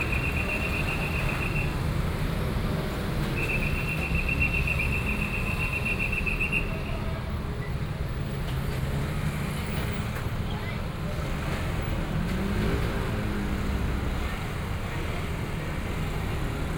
Zhonghua St., Luzhou Dist., New Taipei City - Intersection
Traffic Noise, Traditional temple festivals team, Roadside vendors selling chicken dishes, Binaural recordings, Sony PCM D50 + Soundman OKM II
New Taipei City, Taiwan, 22 October 2013